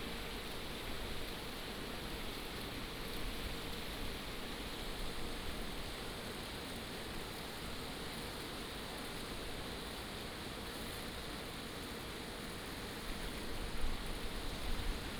Fish pond, Provide fishing fish pond